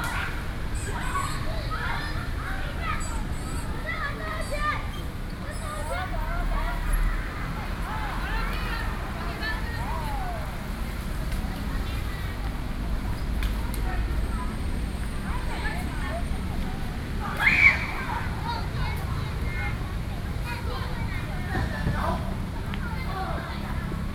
Fuxing Park, Beitou District, Taipei City - play
Beitou District, Taipei City, Taiwan, 5 October 2012, 16:37